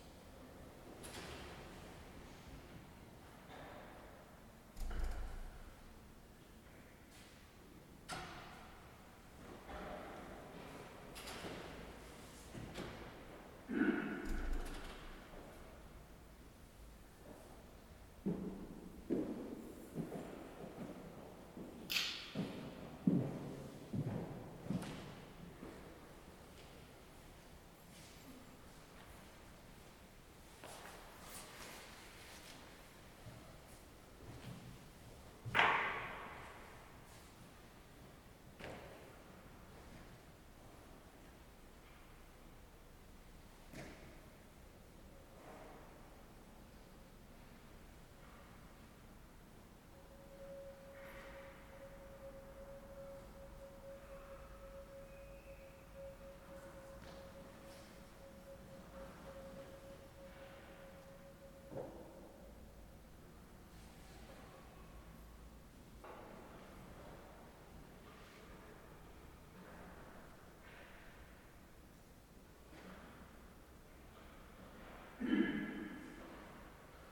Župné námestie, Bratislava-Staré Mesto, Slovakia - Kostel sv. Štefana Uhorského
Interiér kostela, zvenku jsou slyšet kluci na skejtbordech
Bratislava, Slovensko, 16 February 2022, ~17:00